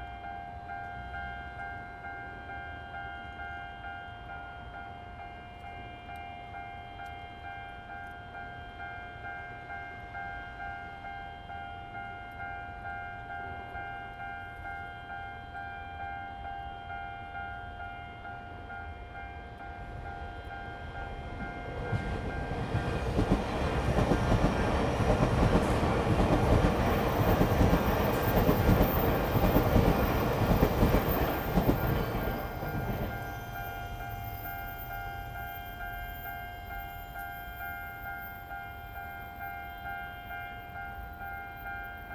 Xinxing Rd., 新竹縣新豐鄉 - the railway level road
In the railway level road, Traffic sound, Train traveling through
Zoom H2n MS+XY